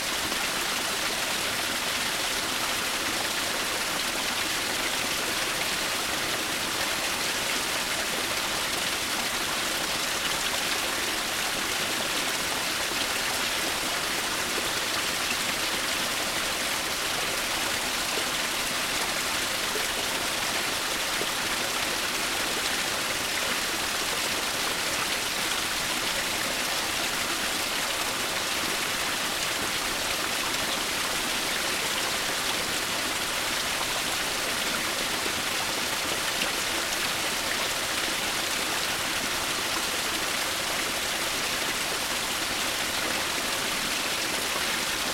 Mizarai, Lithuania, waterfall
Little waterfall. Seems like it is spring falling metres down and running to the river Nemunas